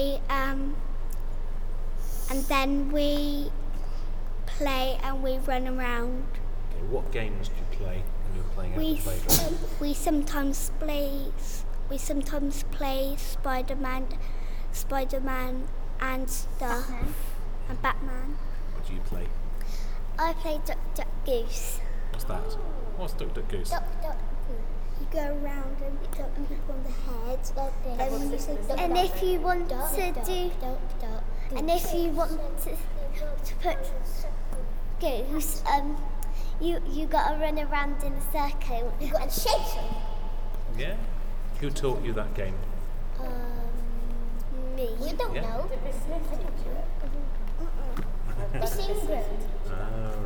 Playground talking games with 1/2H
UK, 8 March 2011, ~11:00